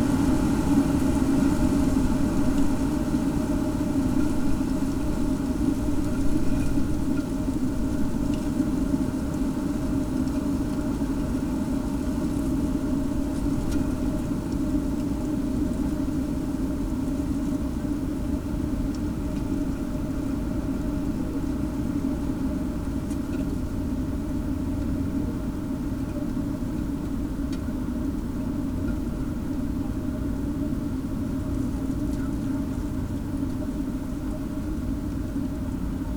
Utena, Lithuania, in the rusty bucket
found object: rusty bucket in little streamlet. microphones placed inside...
4 November 2012